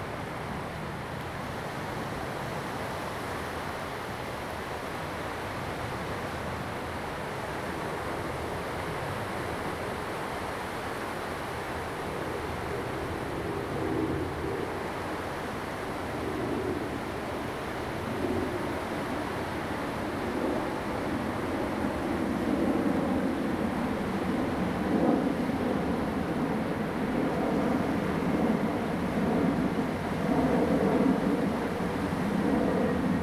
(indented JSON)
{
  "title": "前洲子, 淡水區, New Taipei City - At the beach",
  "date": "2016-04-15 09:42:00",
  "description": "At the beach, Aircraft flying through, Sound of the waves\nZoom H2n MS+XY + H6 XY",
  "latitude": "25.22",
  "longitude": "121.44",
  "altitude": "3",
  "timezone": "Asia/Taipei"
}